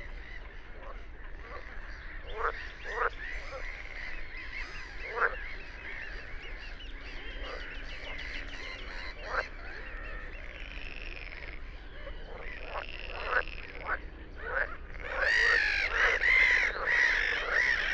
Moorlinse, Kleine Wiltbergstraße, Berlin Buch - evening chorus of water birds and frogs
frogs and birds at Moorlinse pond, Berlin Buch, remarkable call of a Red-necked grebe (Podiceps grisegena, Rothalstaucher) at 3:45, furtherEurasian reed warbler (Acrocephalus scirpaceus Teichrohrsänger) and Great reed warbler(Acrocephalus arundinaceus, Drosselrohrsänger), among others
What sounds like fading is me moving the Telinga dish left and right here and there.
(SD702, Telinga Pro8MK2)
Berlin, Germany, 8 May 2022, 21:00